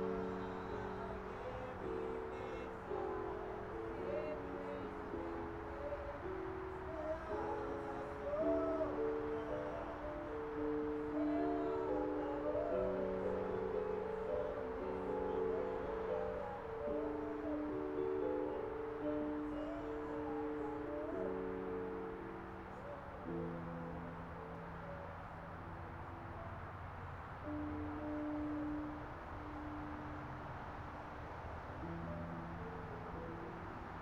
Hyde Park, Austin, TX, USA - song night on a monday
sounds of the highway, dogs, and song